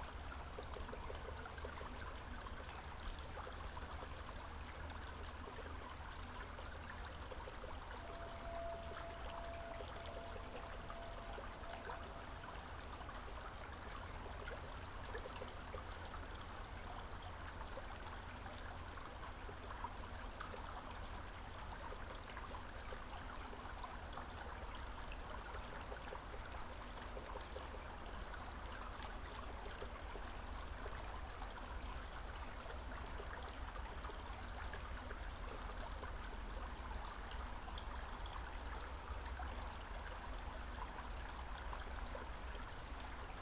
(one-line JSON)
{"title": "Strzeszynskie Lake, Poznan outskirts - brook + woodpecker", "date": "2012-12-16 11:40:00", "description": "a brook on one side, a woodpecker on the other. some forest ambience.", "latitude": "52.47", "longitude": "16.82", "altitude": "88", "timezone": "Europe/Warsaw"}